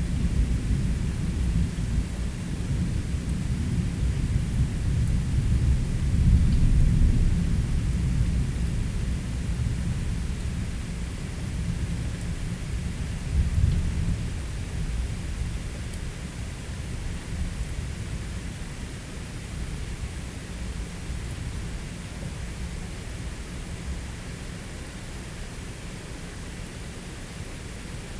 Colchester, Essex, UK - Thunderstorm Colchester, Essex. july 19th - Early Morning
Thunderstorm Colchester, Essex. july 19th - Early Morning
Original recording was 3 hours in Length - excerpt.